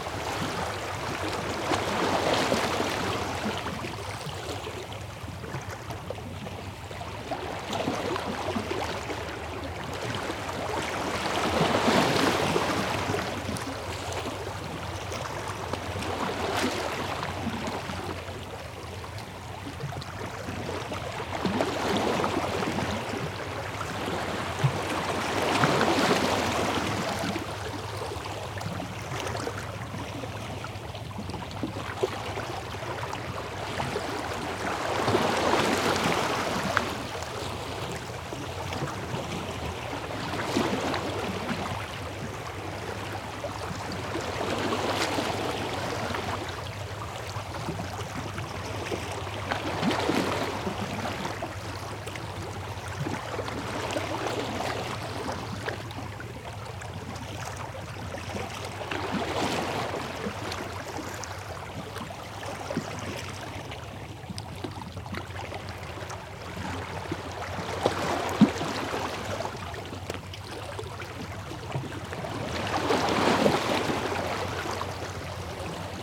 Audible signal Hurtigruten ship.
Звуковой сигнал круизного лайнера компании Hurtigruten. Заходя в порт, судно подаёт звуковой сигнал, который громогласным эхом разноситься над фьордом. Это длинная запись (более 17 мин), начинается со звукового сигнала судна, затем идёт лёгкий плеск волн. Примерно на 5-й минуте до берега доходят большие волны от судна «Хуртигрутен». В течении 10 минут на берег накатывают волны, и к концу записи снова воцаряется штиль.